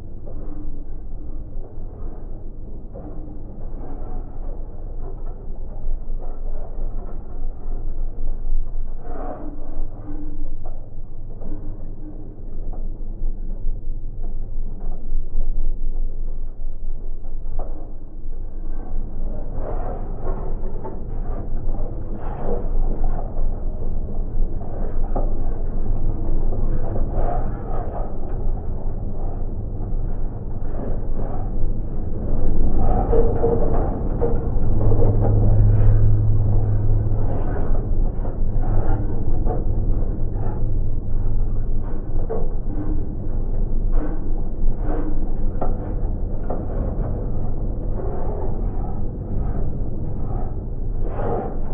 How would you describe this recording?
Abandoned metallic watertower from soviet times. LOM geophone recording.